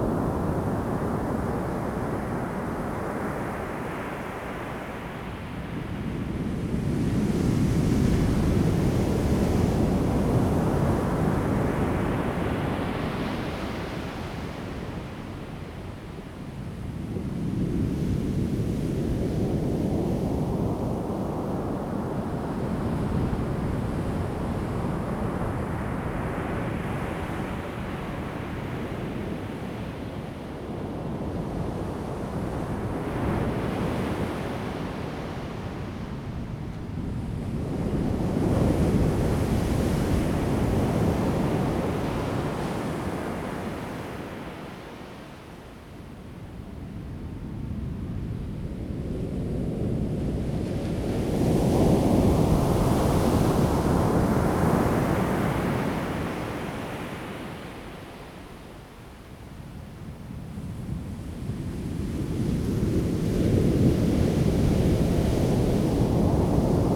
太麻里海岸, Taimali Township, Taiwan - Sound of the waves
At the beach, Sound of the waves, birds sound
Zoom H2n MS+XY